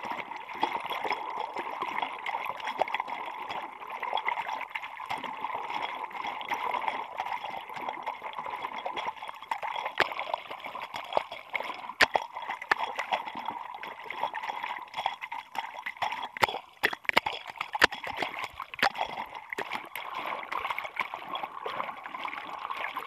Općina Zadar, Croatia - Hydrophone Recording In Zadar, Croatia
Hydrophone recording from the full speed boat
Hrvatska, 7 June 2020